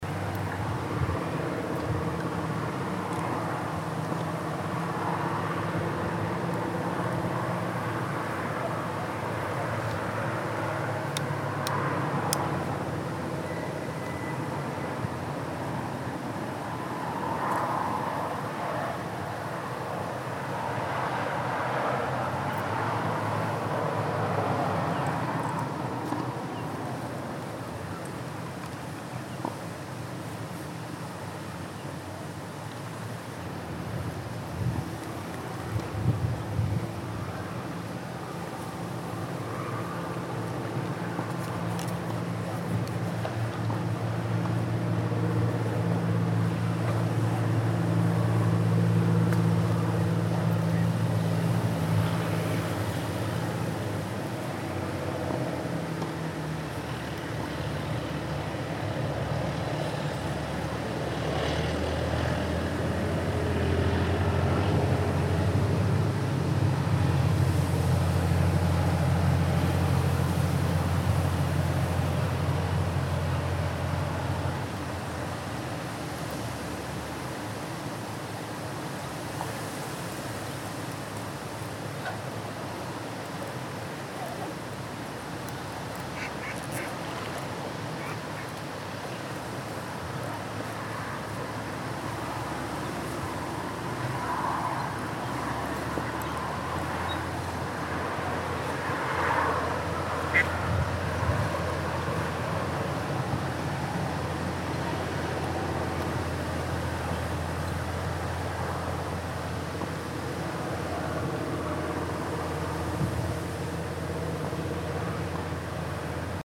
O'Briensbridge, Co. Clare, Ireland - WLD 2015: in the car park, about to start the soundwalk
waiting in a small outdoor car park. On one side, the river Shannon, on the other side houses and a private tennis court. Tractors passing over the nearby bridge in O'Brien's Bridge.
18 July 2015